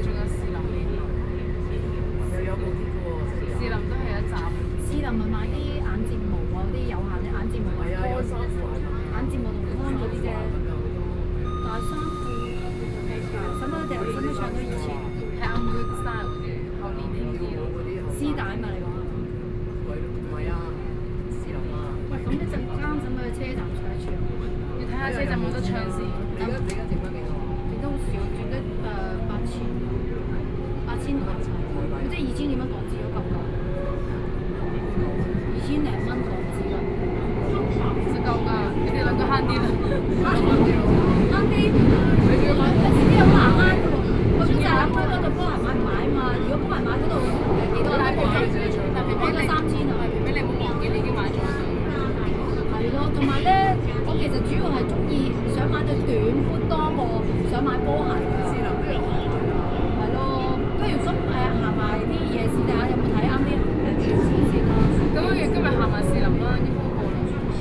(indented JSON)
{
  "title": "Zhongxiao Road, Taipei - Blue Line (Taipei Metro)",
  "date": "2013-09-29 17:22:00",
  "description": "Hong Kong tourists dialogue sound, from Sun Yat-Sen Memorial Hall station to Taipei Main Station, Sony PCM D50 + Soundman OKM II",
  "latitude": "25.04",
  "longitude": "121.55",
  "altitude": "22",
  "timezone": "Asia/Taipei"
}